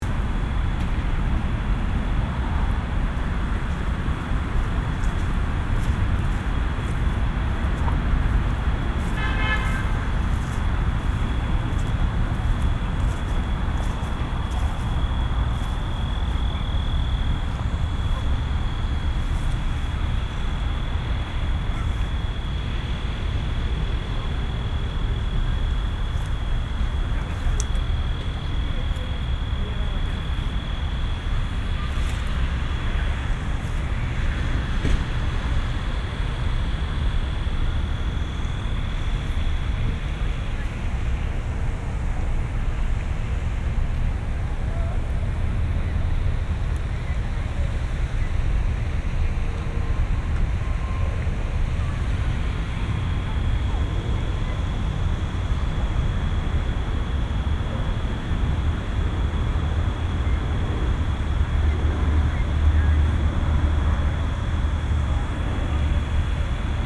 Montreal - Parc Jarry (cricket) - ground cricket in the trees
A ground cricket at Parc Jarry, in the trees along Blvd. St-Laurent.
Recorded for World Listening Day 2010.
QC, Canada